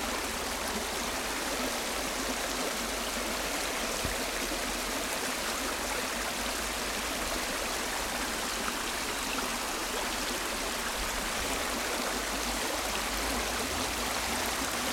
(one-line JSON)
{"title": "R. Principal, Portugal - Agroal fluvial beach soundscape", "date": "2017-09-17 17:48:00", "description": "Small creek, water running, birds, nature soundscape. Recorded with a pair of DIY primo 172 capsules in a AB stereo configuration into a SD mixpre6.", "latitude": "39.68", "longitude": "-8.44", "altitude": "95", "timezone": "Europe/Lisbon"}